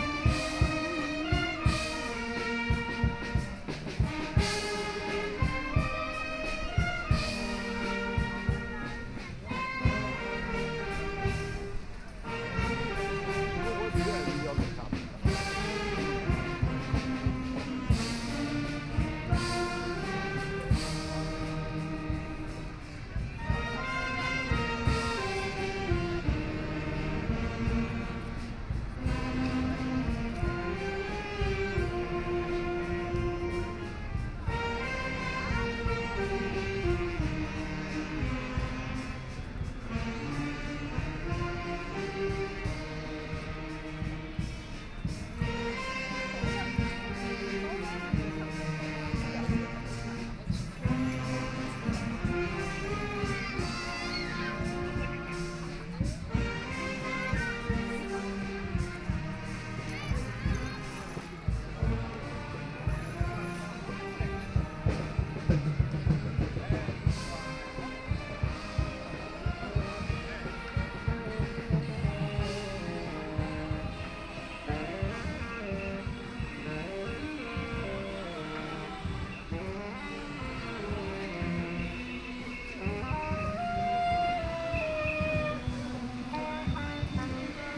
Heping Park, Shanghai - Saxophone performances
Saxophone performances, community groups, Binaural recording, Zoom H6+ Soundman OKM II